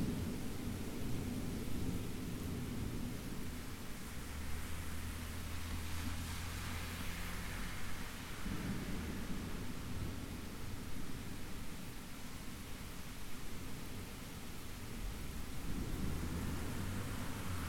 Eastside, Milwaukee, WI, USA - thunderstorm
Rainy, windy, rolling thunder, much traffic on wet pavement.